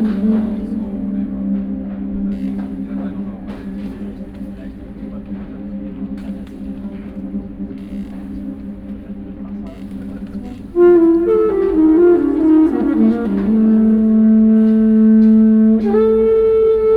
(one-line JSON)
{"title": "Zellerau, Würzburg, Deutschland - Würzburg, Mainwiesen, Hafensommer Fesival, soundcheck", "date": "2013-07-24 21:30:00", "description": "In the audience area of the outdoor Hafensommer festival venue. The sound of the soundcheck of the Jon Hassel group. Technicians talking in the technic booth, some different instrument sounds and then the sound of Jon Hassel playing the trumpet, a coughing, distant audience movements.\nsoundmap d - social ambiences and topographic field recordings", "latitude": "49.80", "longitude": "9.92", "altitude": "167", "timezone": "Europe/Berlin"}